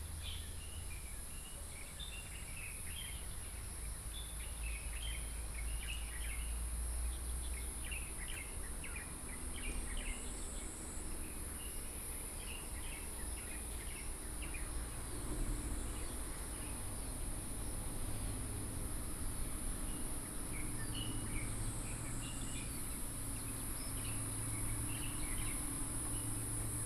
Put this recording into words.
Bird calls, Traffic noise, Stream